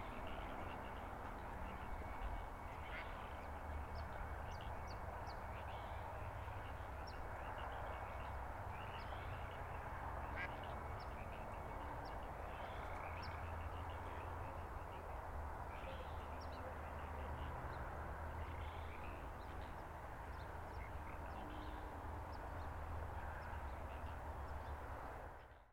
St, Point Reyes Station, CA, USA - frogsong pt. reyes station
recording taken on a walking path by the town, near a pond that houses some wildlife. cars on the shoreline highway can be heard in the distance, along with a high-pitched ringing sound I could not identify.